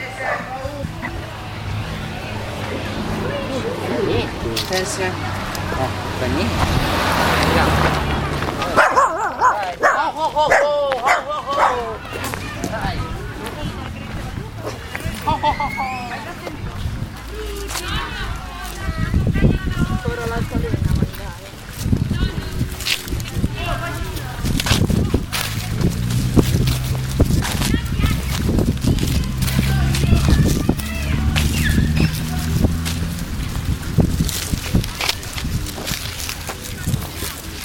via Ovidio, Parabiago, Parco via Ovidio
Parco in Via Ovidio